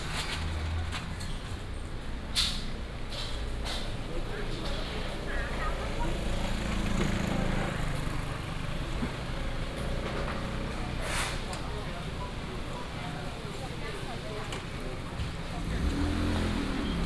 埔心新興街, Yangmei Dist. - walking in the traditional market area
walking in the traditional market area, Ready to operate in the market
Taoyuan City, Taiwan